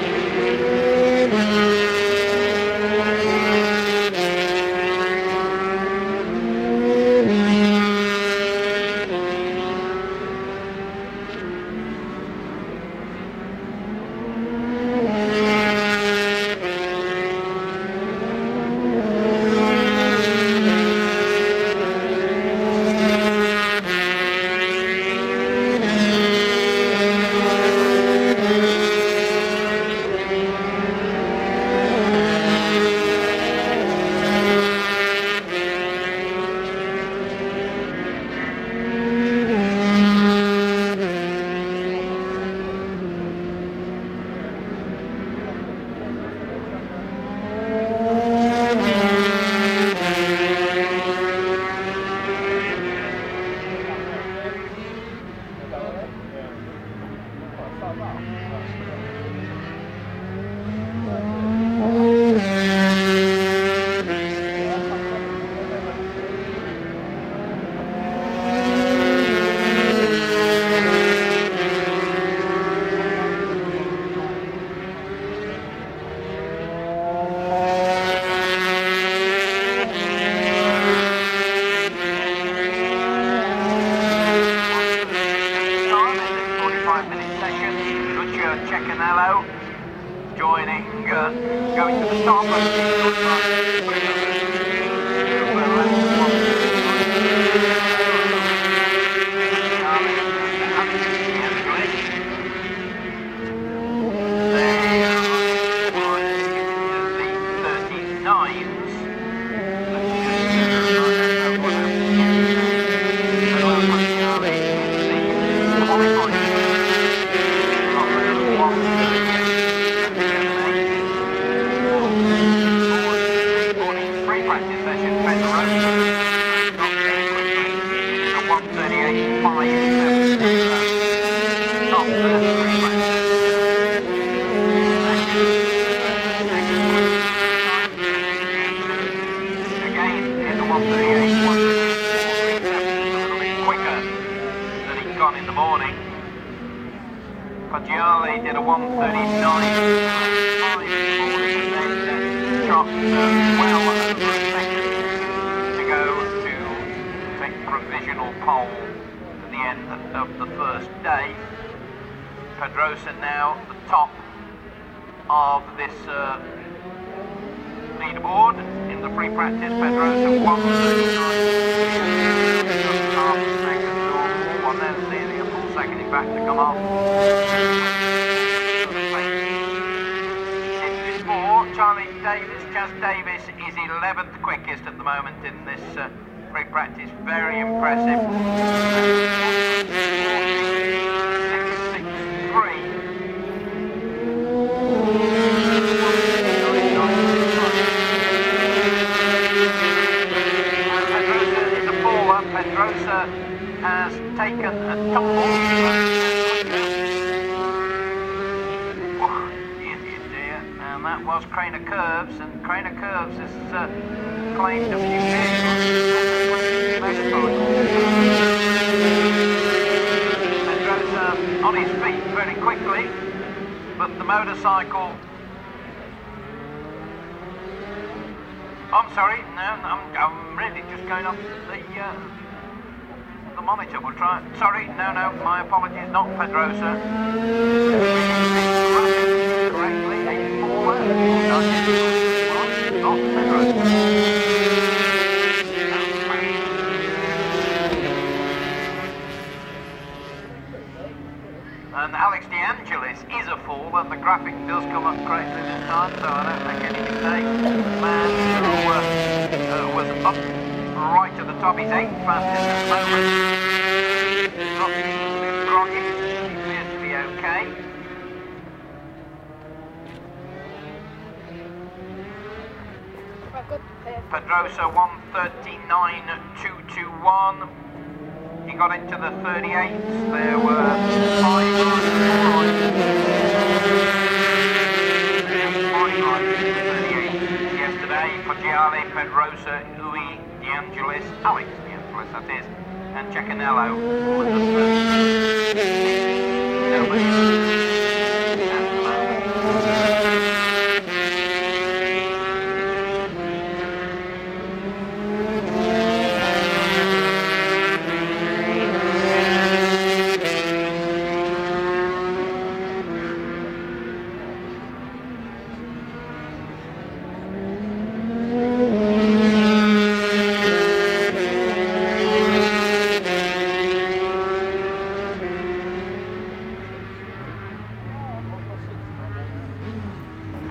Castle Donington, UK - British Motorcycle Grand Prix 2002 ... 125 ...
British Motorcycle Grand Prix 2002 ... 125 ... free practice and qualifying ... one point stereo mic to mini-disk ... commentary ...
Derby, UK, July 2002